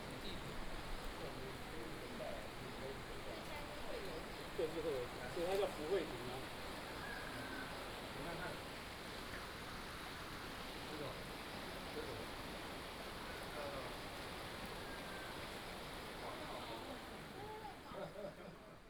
雙溪區長安街, New Taipei City - Walking in the old alley
Stream sound, Walking in the old alley
Sonu PCM D100 XY